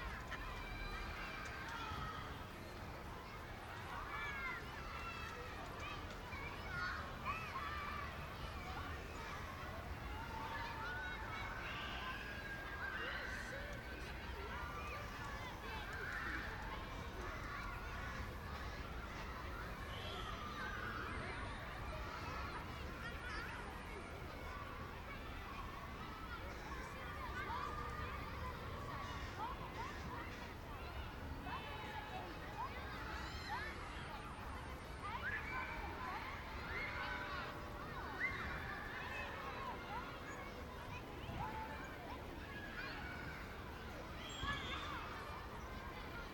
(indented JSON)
{
  "title": "Oosterpark, Amsterdam, The Netherlands - Children playing in the swimming pool on a hot day",
  "date": "2013-07-18 14:00:00",
  "description": "The tower bell rings 2 a clock. The low boom of the tram trembles the hot air.\nCrows chatter in the trees surrounding the park and masking the city's noise.\nAfter a cold spring, summer has finally arrived. Small children enjoy the cool water\nand ice cream, in the first city park of Amsterdam. A nostalgic image of 'endless'\nvacations and hot summer days, recorded in the cool shadow of a music kiosk.\nSome equalisation and fades.",
  "latitude": "52.36",
  "longitude": "4.92",
  "altitude": "2",
  "timezone": "Europe/Amsterdam"
}